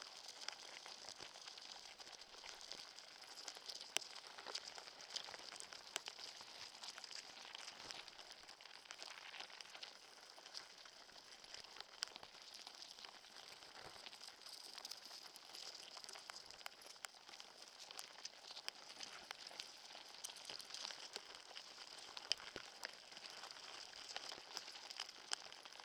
Aukštaitija National Park, Lithuania, ants
ants on the fallen branch. contact microphones